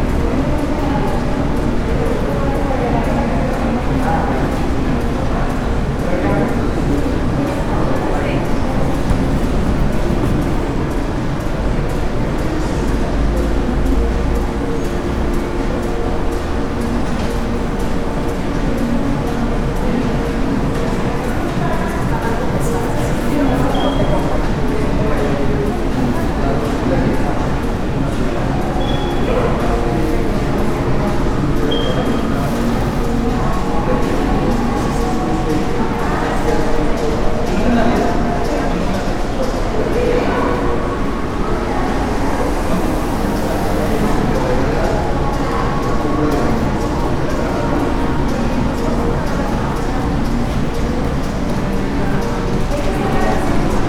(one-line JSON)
{"title": "León, Guanajuato, Mexico - Afuera de Liverpool. Ahora parte del centro comercial y que antes era estacionamiento.", "date": "2022-06-15 14:11:00", "description": "Outside of Liverpool. Now part of the shopping center and was previously a parking lot.\nI made this recording on june 15th, 2022, at 2:11 p.m.\nI used a Tascam DR-05X with its built-in microphones and a Tascam WS-11 windshield.\nOriginal Recording:\nType: Stereo\nEsta grabación la hice el 15 de junio 2022 a las 14:11 horas.", "latitude": "21.16", "longitude": "-101.70", "altitude": "1827", "timezone": "America/Mexico_City"}